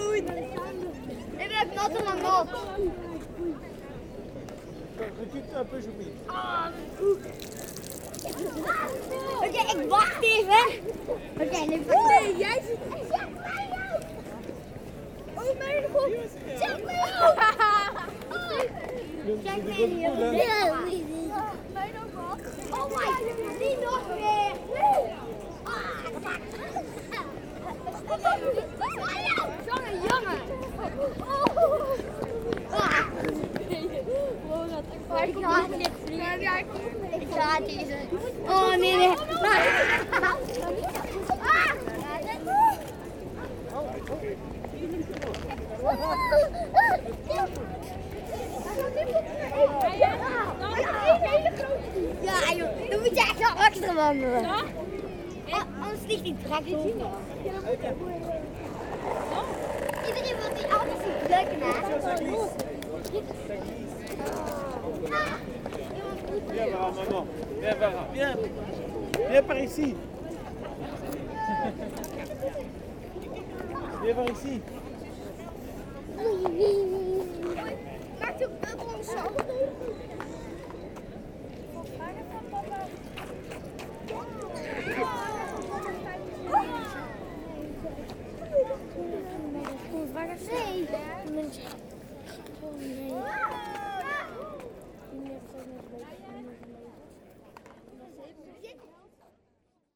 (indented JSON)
{
  "title": "Maastricht, Pays-Bas - Children playing with bubbles",
  "date": "2018-10-20 15:30:00",
  "description": "A street artist produces a colossal amount of bubbles. A swarm of children is trying to catch it. Some have full of dishwasher soap on their hair !",
  "latitude": "50.85",
  "longitude": "5.69",
  "altitude": "52",
  "timezone": "Europe/Amsterdam"
}